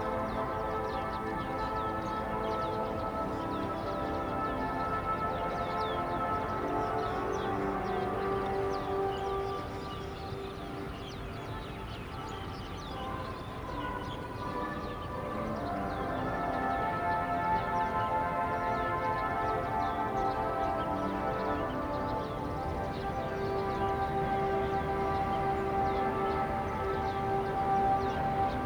Flag Raising Ceremony, National anthem, Pull the flag-raising, Sony ECM-MS907, Sony Hi-MD MZ-RH1